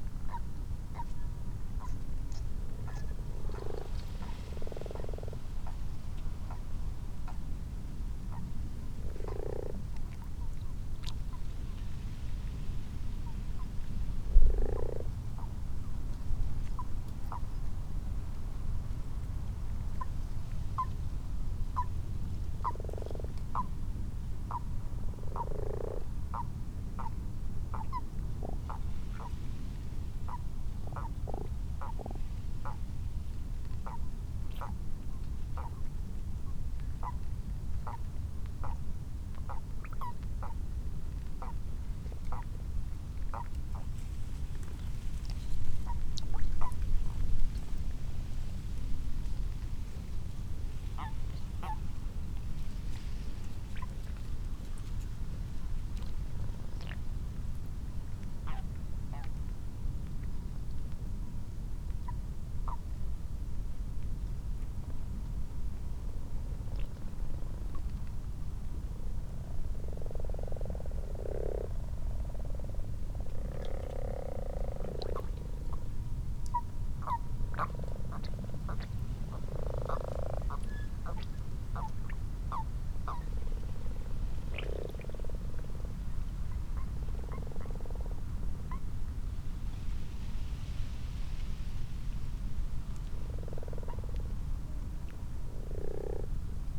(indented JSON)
{
  "title": "Malton, UK - frogs and toads ...",
  "date": "2022-03-12 21:52:00",
  "description": "common frogs and common toads ... xlr sass to zoom h5 ... time edited unattended extended recording ...",
  "latitude": "54.12",
  "longitude": "-0.54",
  "altitude": "77",
  "timezone": "Europe/London"
}